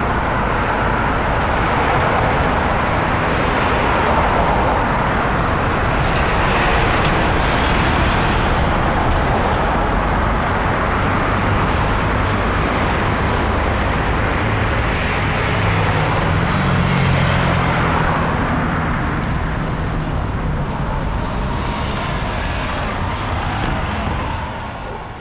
You will hear: various types of vehicles, large and small, car, trucks, bicycles, motorcycles, all of these at different speeds, light wind, horn, dog.
Región Andina, Colombia, 9 May 2021, 18:30